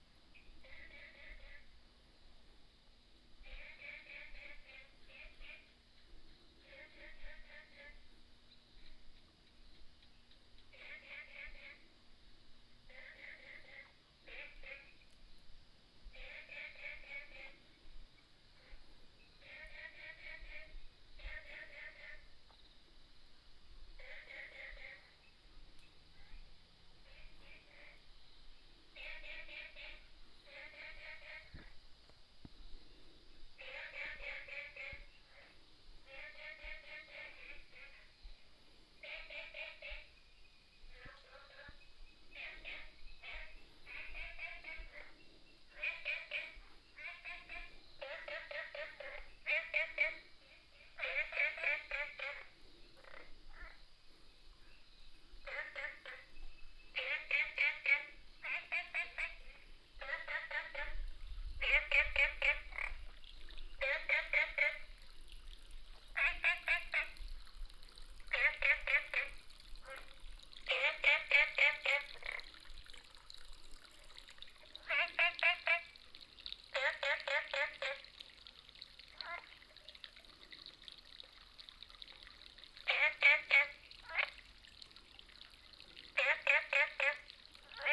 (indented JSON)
{
  "title": "TaoMi 綠屋民宿, Nantou County - Frogs sound",
  "date": "2015-04-28 23:32:00",
  "description": "Frogs sound, walking around at the Hostel",
  "latitude": "23.94",
  "longitude": "120.92",
  "altitude": "503",
  "timezone": "Asia/Taipei"
}